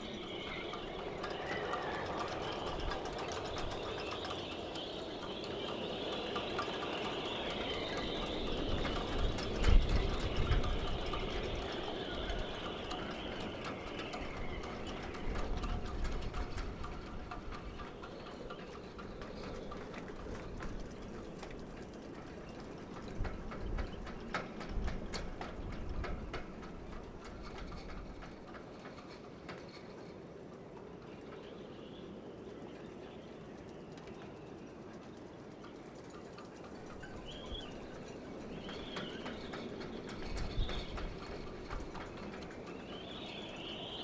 stormy day (force 7-8), wind blows through the riggings of the ships
the city, the country & me: june 13, 2013
Woudsend, The Netherlands, 13 June 2013